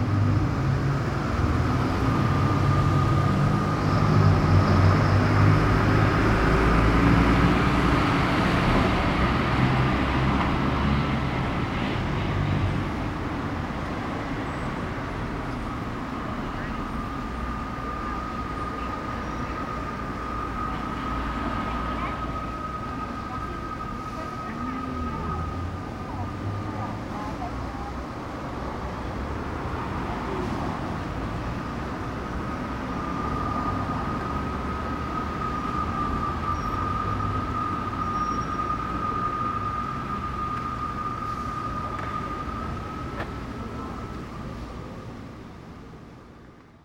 Panorama sonoro: cigarras em árvores no Calçadão e em uma praça próxima cantavam, veículos transitavam por uma rua transversal ao Calçadão e pedestres circulavam pelo local. Um chafariz funcionava e a água circulava por ele.
Sound panorama: cicadas in trees on the boardwalk and in a nearby square sang, vehicles crossed a street cross the boardwalk and pedestrians circulated around the place. a fountain worked and water flowed through it.